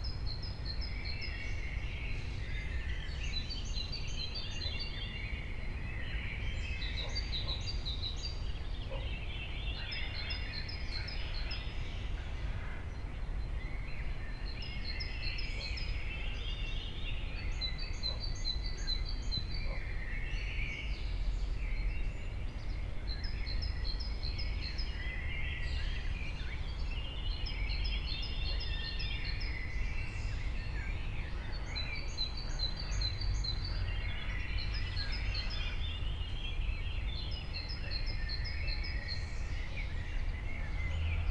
all the mornings of the ... - jun 21 2013 friday 04:26
Maribor, Slovenia, 21 June